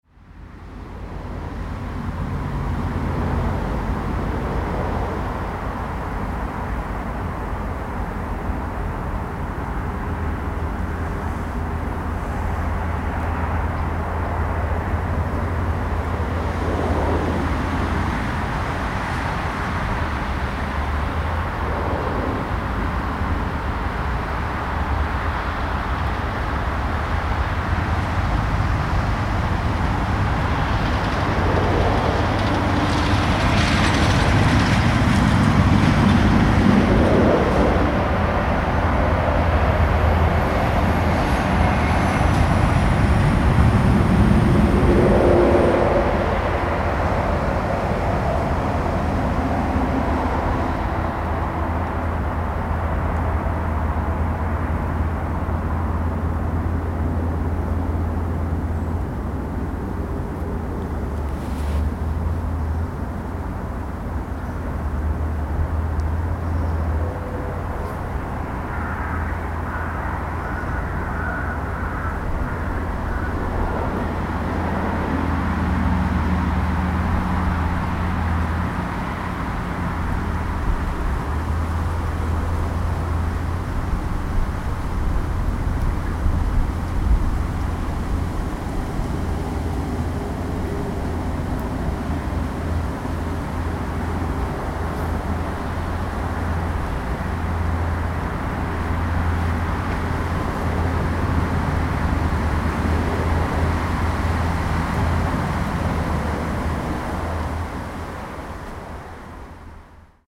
Limerick City, Co. Limerick, Ireland - Western entrance to Ted Russel Park
waiting for World Listeners in Limerick to arrive. Road traffic noise from Condell road, propeller aircraft, industrial noise from across River Shannon.
18 July 2014, 13:40